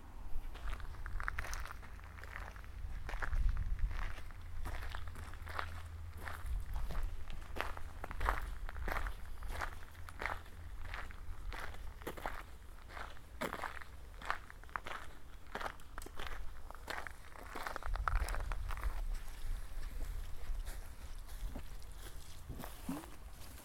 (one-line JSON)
{"title": "Espace culturel Assens, Baumpflege", "date": "2011-10-02 15:11:00", "description": "französiche Gartenpflege im Welschland, Assens Espace culturel", "latitude": "46.61", "longitude": "6.63", "altitude": "646", "timezone": "Europe/Zurich"}